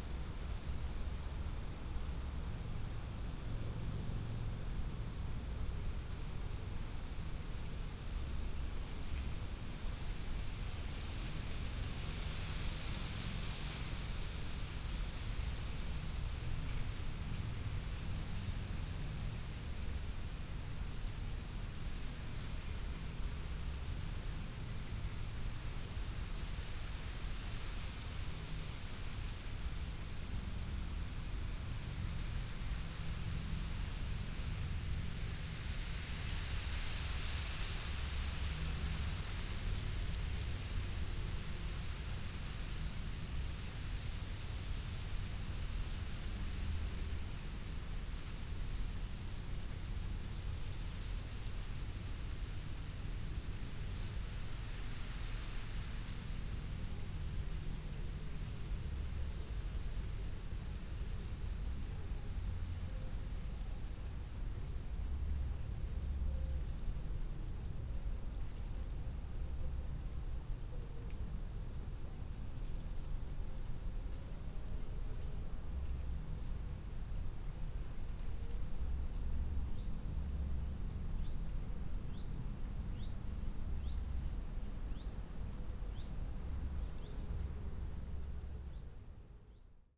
Badesee Madlow, Cottbus - wind in bush
pond in the park, no poeple around, wind in a hazelnut bush
(Sony PCM D50, Primo EM172)